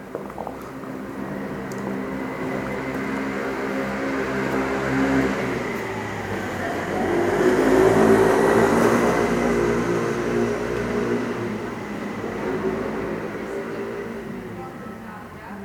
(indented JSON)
{
  "title": "San Michele Church, Pavia, Italy - 02 - October, Sunday 8PM, dusk, 18C, small groups of people passing by",
  "date": "2012-10-21 20:10:00",
  "description": "Same day as before, some hours later. Comfortable evening and nice climate to walk around. Few people passing by, some stopping and admiring the monument.",
  "latitude": "45.18",
  "longitude": "9.16",
  "altitude": "79",
  "timezone": "Europe/Rome"
}